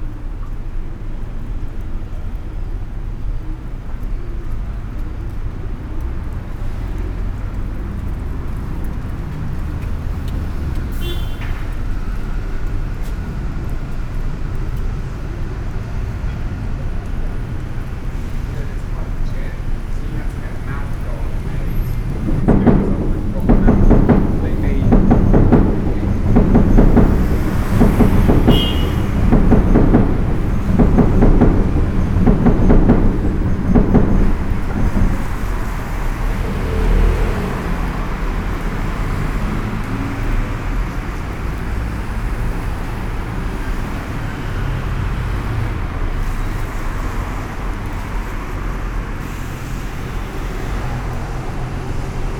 Under Hackney Road Bridge, London, UK - Bridge
Under the bridge carrying the railway . There are traffic lights here and frequent trains above.
Mix Pre 6 II with 2 x Sennheiser MKH 8020s